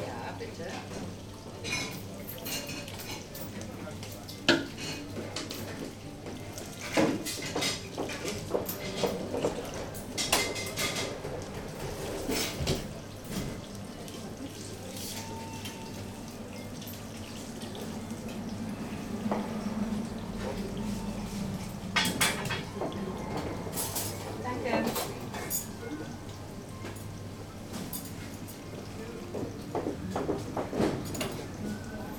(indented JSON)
{
  "title": "Schönenberg - Motorradtreff, Imbiss / bikers diner",
  "date": "2009-05-21 14:20:00",
  "description": "21.05.2009 currywurst, pommes, mayo, senf, burger, cola, kaffee - reger betrieb in der raststätte / busy resting place",
  "latitude": "50.84",
  "longitude": "7.44",
  "altitude": "143",
  "timezone": "Europe/Berlin"
}